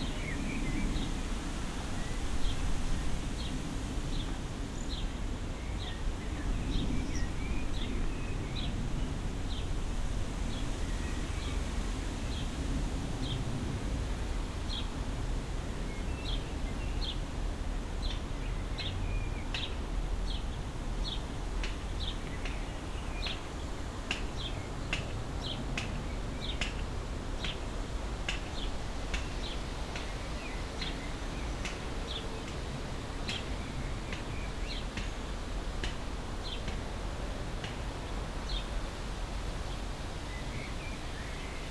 allotment, Treptow, Berlin - garden ambience
lovely garden plot, this spot is directly affected by the planned motorway, the two houses at the end of nearby Beermannstr. will disappear too.
Sonic exploration of areas affected by the planned federal motorway A100, Berlin.
(SD702, Audio technica BP4025)
17 May, ~16:00